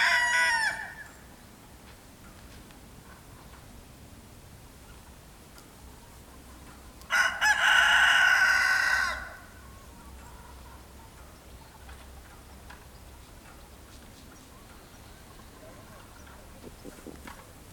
Očová, Slovakia, Mateja Bela Funtíka - o osveti / on enlightenment
Binaural recording made at the birthplace of the proponent of Slovak Enlightenment Matej (Matthias) Bel (1684 – 1749)